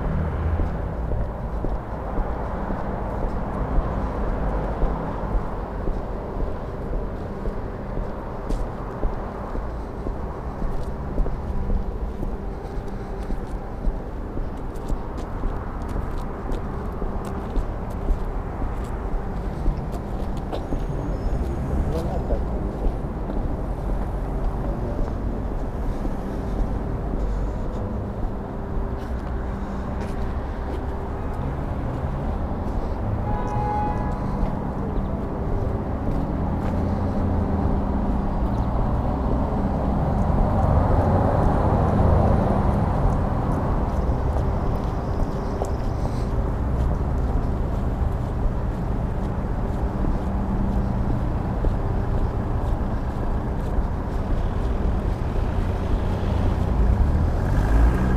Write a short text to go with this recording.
Soundwalk from canteeen at Dąbrowszczaków street, down to Piłsudskiego alley. Pedestrian crossing near city hall. Entrance to Alfa shopping center. Walk through shopping mall. Return the same way.